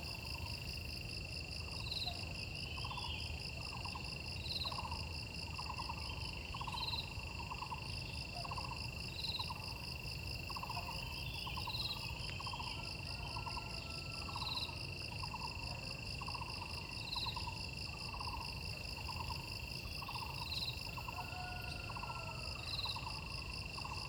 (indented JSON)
{
  "title": "中路坑桃米里, Puli Township - Sound of insects and birds",
  "date": "2016-05-06 07:08:00",
  "description": "Birds called, Sound of insects\nZoom H2n MS+XY",
  "latitude": "23.95",
  "longitude": "120.92",
  "altitude": "590",
  "timezone": "Asia/Taipei"
}